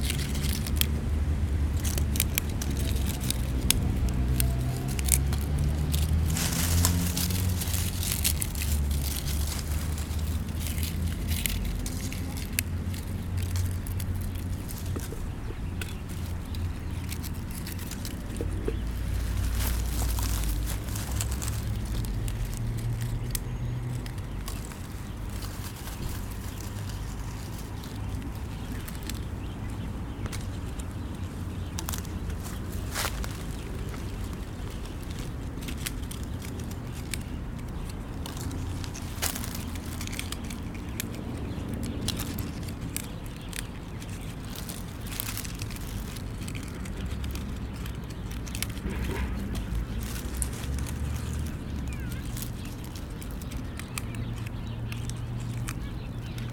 Quai Edouard Serullaz, Seyssel, France - Sous le savonnier
Au bord du Rhône sous le savonnier, ramassage des graines . Bruits de la circulation à Seyssel .
Auvergne-Rhône-Alpes, France métropolitaine, France, 2 October, 16:45